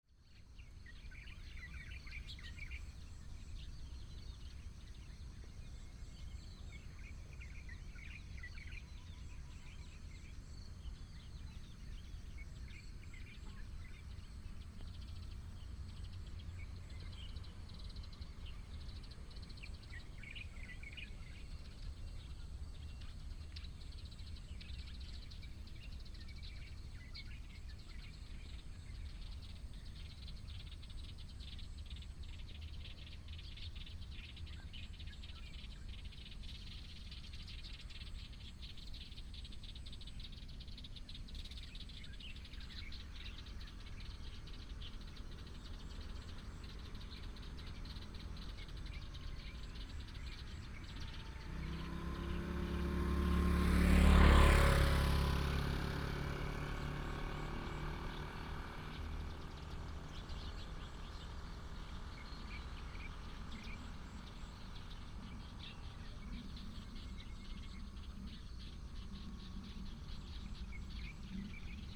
Ln., Zhongshan Rd., Bade Dist. - Morning farmland
Morning farmland, Birds sound, traffic sound
26 July 2017, 05:45